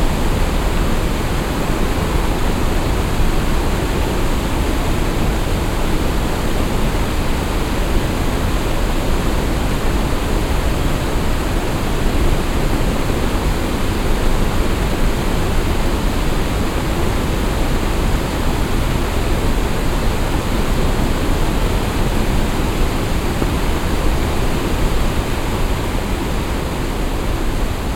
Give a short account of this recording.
On a bridge that crosses the border river Our. The bridge also functions as a dam and their is a small waterfall on the other side. The sound of the hissing, falling water. Stolzembourg, Brücke und kleiner Wasserfall, Auf einer Brücke, die den Grenzfluss Our überquert. Die Brücke funktioniert auch als Damm. Auf der anderen Seite ist ein kleiner Wasserfall. Das Geräusch von rauschendem und fallendem Wasser. Stolzembourg, pont et petite chute d'eau, Sur un pont qui enjambe la rivière frontalière Our. Le pont fait aussi office de barrage et une petite chute d’eau s’est formée de l’autre côté. Le bruit de l’eau qui chante en tombant. Project - Klangraum Our - topographic field recordings, sound objects and social ambiences